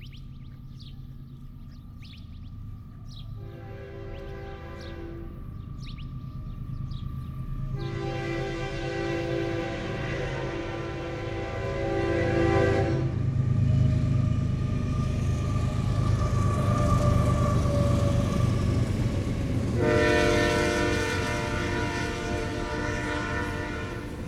Estación Wadley, Mexico - Central square of the small village of Wadley in the desert
Central square of the small village of Wadley in the desert.
During Morning 10am.
Recorded by an ORTF setup Schoeps CCM4 on a Sound Devices MixPre6.
Sound Ref: MX-190607-001
San Luis Potosí, México, 7 June 2019